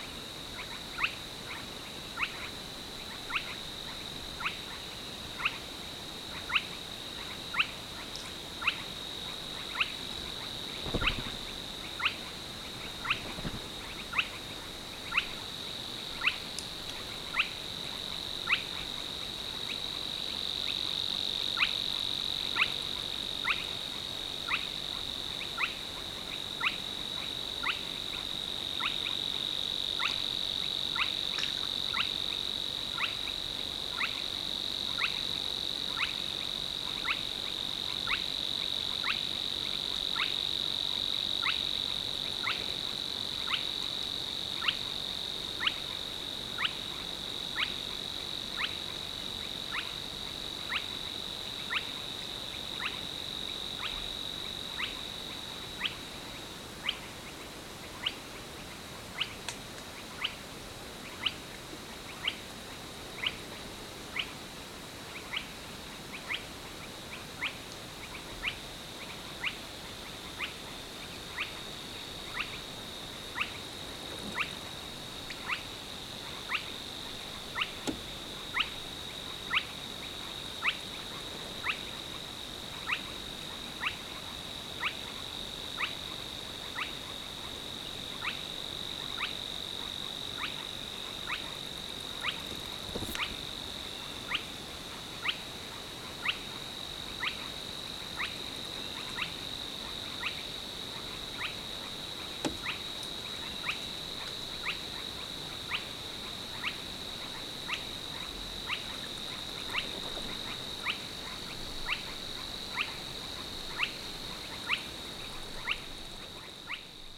Penijõe River, Matsalu, Estonia. Nightbirds.
On the river with boat. Spotted crakes, Savis warbler, bats.